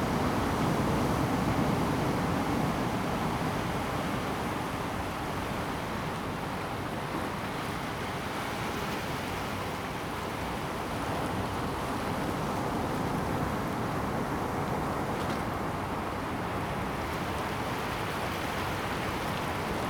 the waves dashed against the rocks, Sound of the waves, birds sound
Zoom H2n MS+XY